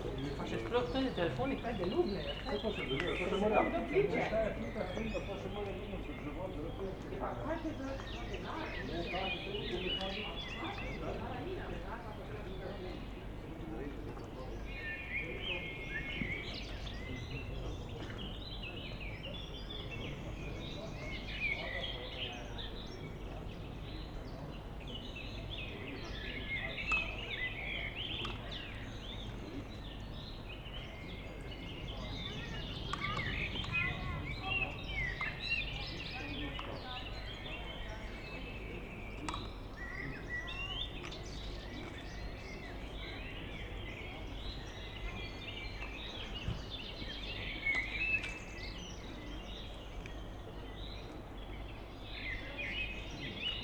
Maribor, Mariborski Otok - swimming bath
the bath is still closed at end of may, which means it's open for promenades. no water in the bassins, which adds a slight reverb to the whole place, at whitsunday afternoon.
(SD702, AT BP4025)